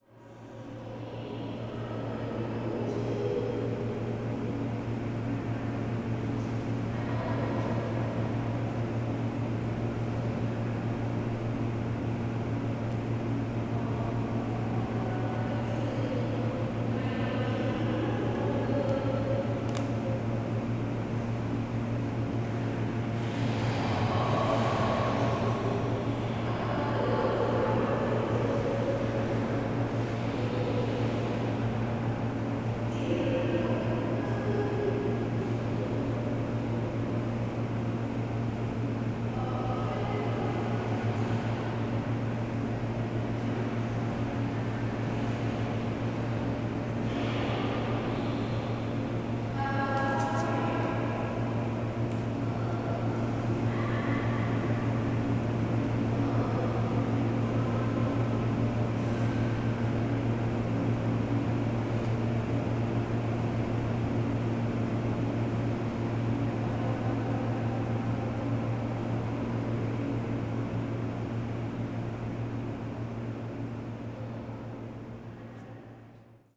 The University of Texas at Austin, Austin, TX, USA - PCL Stairwell/Library Cellphone Zone
Recorded on the 6th floor of the Library stairwell, capturing students talking on their cellphones below. Equipment: Marantz PMD661 and a stereo pair of DPA 4060s.